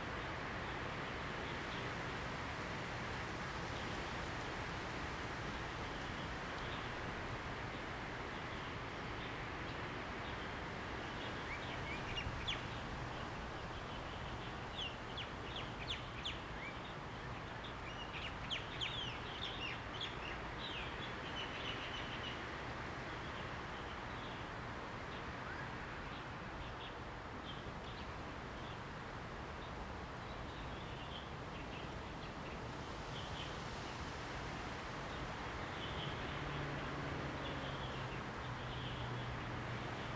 慈湖, Jinning Township - Birds singing
Birds singing, Forest and Wind
Zoom H2n MS+XY